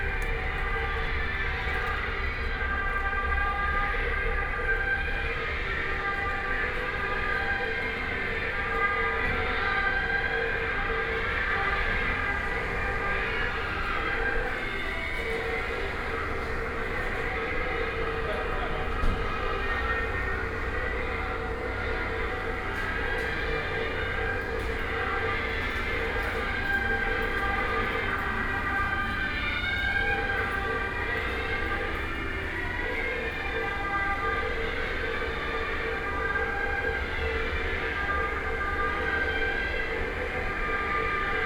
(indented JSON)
{
  "title": "德林寺, Luzhu Dist., Taoyuan City - In the temple",
  "date": "2017-08-18 14:24:00",
  "description": "In the temple, traffic sound, firecracker, Bells, drum",
  "latitude": "25.01",
  "longitude": "121.26",
  "altitude": "85",
  "timezone": "Asia/Taipei"
}